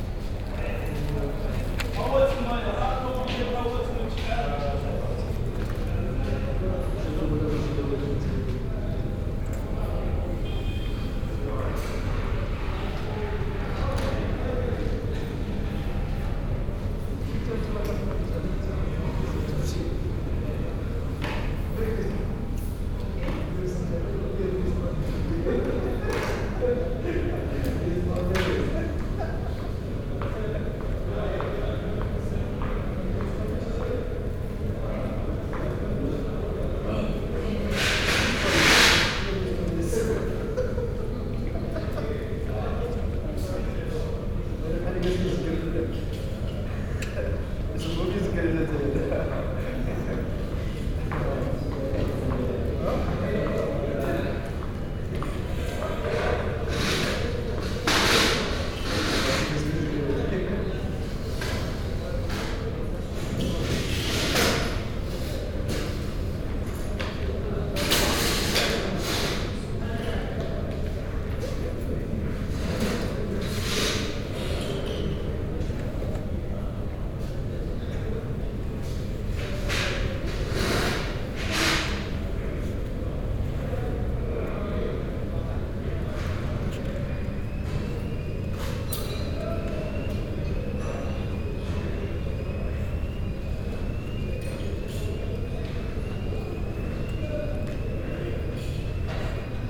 waiting for the Speed Ferry to Tarifa, cafe, stairs to the embarquement, lot of concrete around